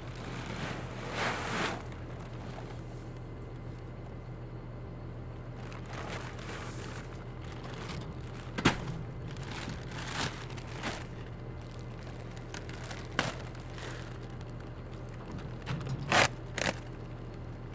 Filotas, Greece - Ready for planting the peas
Αποκεντρωμένη Διοίκηση Ηπείρου - Δυτικής Μακεδονίας, Ελλάς, 23 March